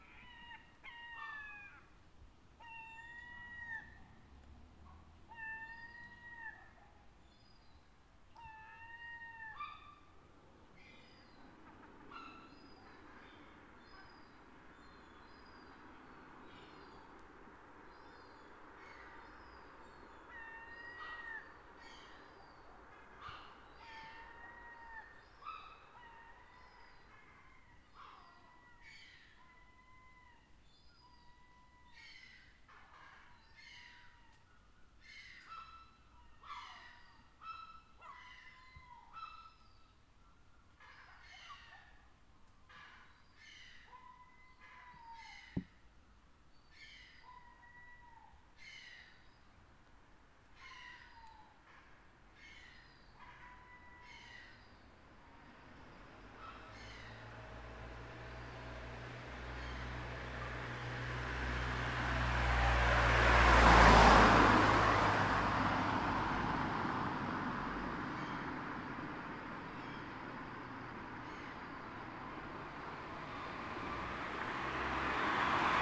South Walks Road, Dorchester, Dorset, UK - Seagulls and jackdaws nesting at dusk
Standing on the pavement just as the last daylight is fading. In the trees above jackdaws are nesting and making daft noises. Somewhere amongst the rooftops behind, seagulls are nesting. You can hear the baby seagulls making a noise. A few cars pass.
Tascam DR40, built-in mics.
22 June